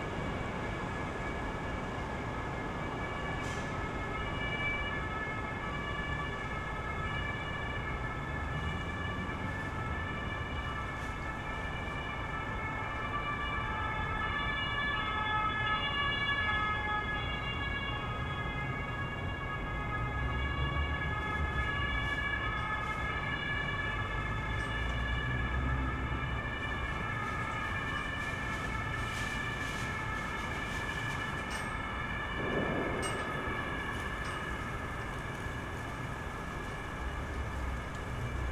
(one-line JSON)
{"title": "Berlin Bürknerstr., backyard window - unquiet night", "date": "2017-12-23 22:55:00", "description": "gusts of wind in the birches behind my backyard, sirens, unquietness is in the air, that night before christmas.\n(SD702, AT BP4025)", "latitude": "52.49", "longitude": "13.42", "altitude": "45", "timezone": "Europe/Berlin"}